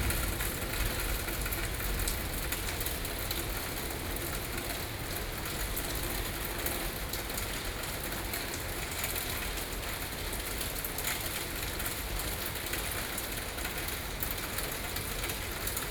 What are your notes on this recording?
Early morning thunderstorms, Sony PCM D50 + Soundman OKM II